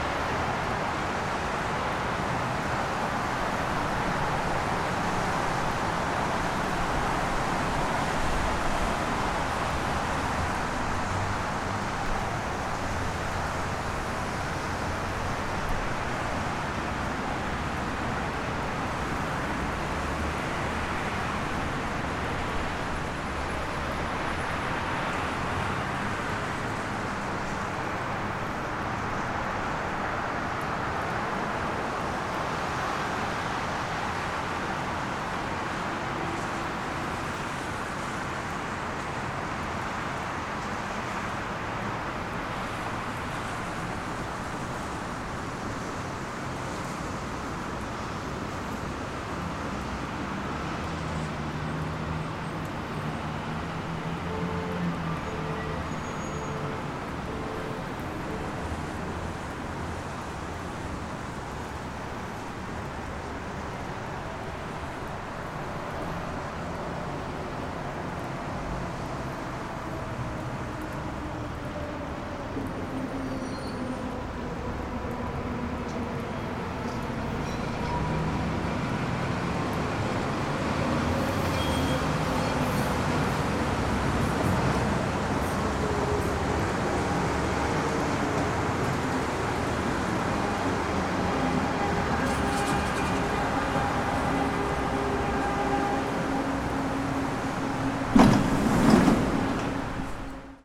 rence people mover station, downtown detroit
MI, USA